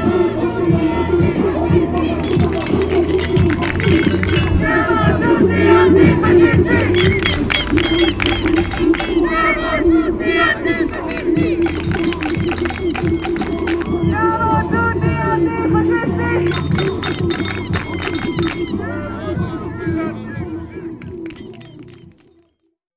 Malpensabaan, Rotterdam, Netherlands - Demonstration against Detention Centrum
Demonstration against Detention Centrum. Recorded w/ Parabolic Dish Dodotronic.